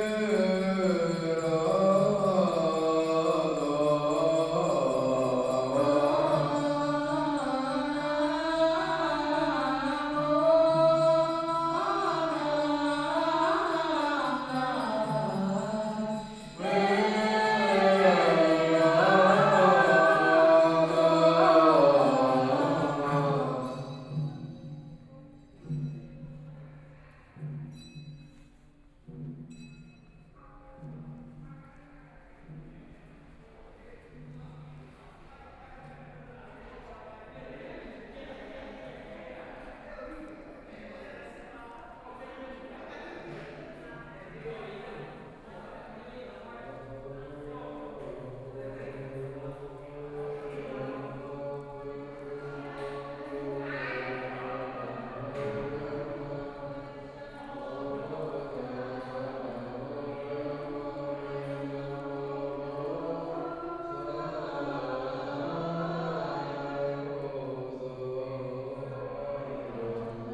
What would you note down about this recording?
Walk inside and outside the stadium, Buddhist Puja chanting voice, Binaural recordings, Zoom H4n+ Soundman OKM II